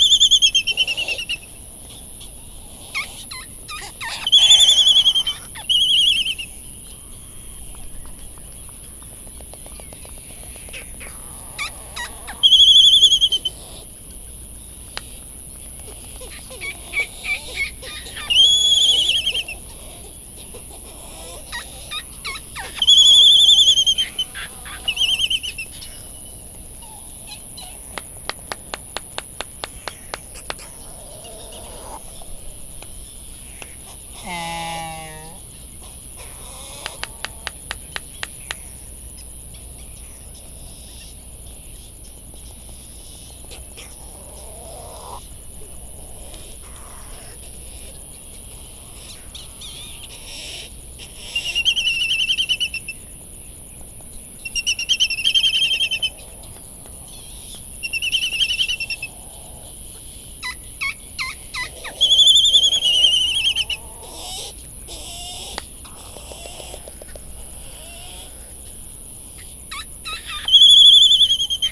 United States Minor Outlying Islands - Laysan albatross and bonin petrel soundscape ...
Laysan albatross and Bonin petrel soundscape ... Sand Island ... Midway Atoll ... laysan calls and bill clapperings ... bonin calls and flight calls ... white tern calls ... open lavalier mics ... background noise from generators ...
13 March 2012, ~20:00